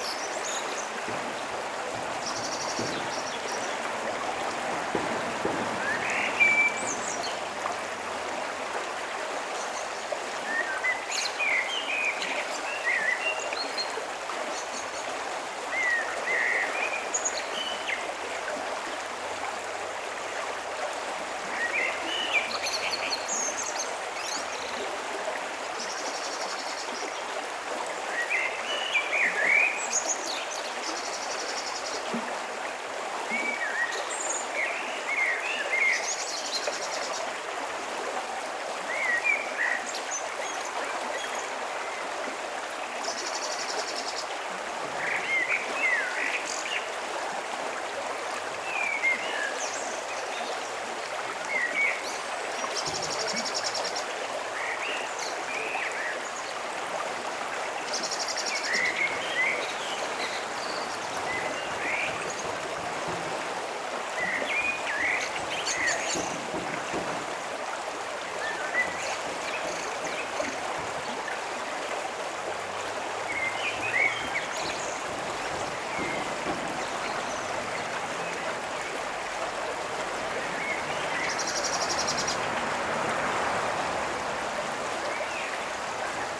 Klosterberg, Bad Berka, Deutschland - Beneath the Ilm Bridge #3
*Recording technique: ORTF.
*SOUND: Goose call, bird calls in separate channels, human activity, occasional vehicles.
The Ilm is a 128.7 kilometers (80.0 mi) long river in Thuringia, Germany. It is a left tributary of the Saale, into which it flows in Großheringen near Bad Kösen.
Towns along the Ilm are Ilmenau, Stadtilm, Kranichfeld, Bad Berka, Weimar, Apolda and Bad Sulza.
In the valley of Ilm river runs the federal motorway 87 from Ilmenau to Leipzig and two railways: the Thuringian Railway between Großheringen and Weimar and the Weimar–Kranichfeld railway. Part of the Nuremberg–Erfurt high-speed railway also runs through the upper part of the valley near Ilmenau.
*Recording and monitoring gear: Zoom F4 Field Recorder, RODE M5 MP, Beyerdynamic DT 770 PRO/ DT 1990 PRO.
Thüringen, Deutschland, 12 May 2020